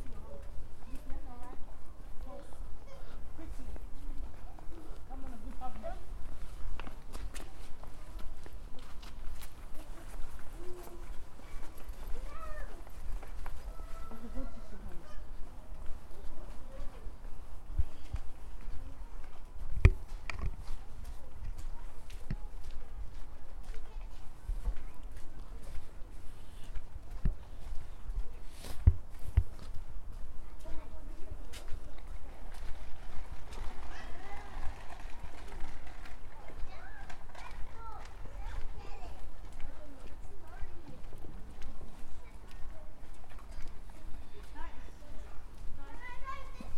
Capturing the sounds down Chatsworth Road through to Elderfield Road
1 January 2022, 13:28